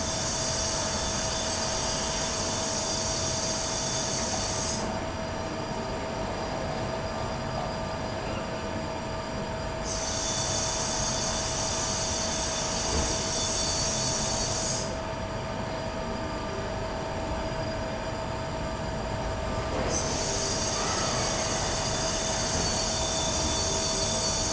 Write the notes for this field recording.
Strange noises from what sounds like gas pumping into what appears to be beer brewing tanks.